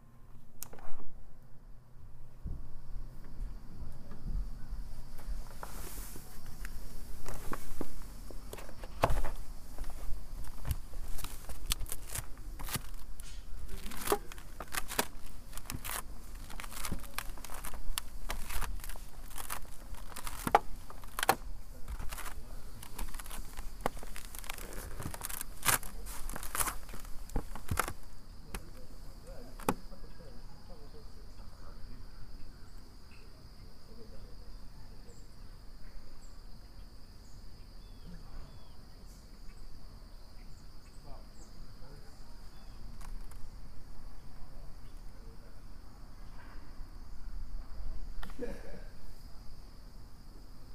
Albert St, Kingston, ON, Canada - Squirrels On My Roof
I saw a squirrel on the roof of my house so I went out to capture some of the ambience that the squirrel may hear while sitting there. I climbed out of my window onto the roof and recorded some audio.
Eastern Ontario, Ontario, Canada, 18 September 2021